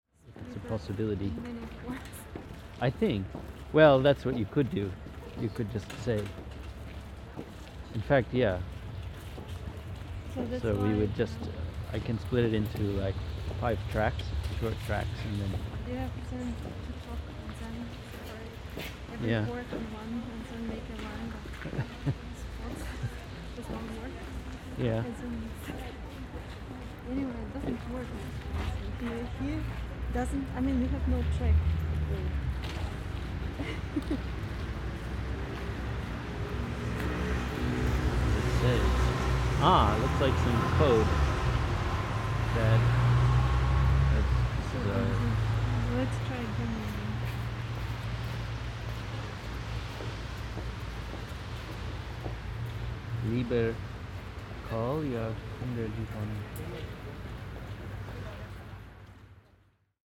walking on Karl-Liebknecht-Str, Aporee workshop
radio aporee sound tracks workshop GPS positioning walk part 2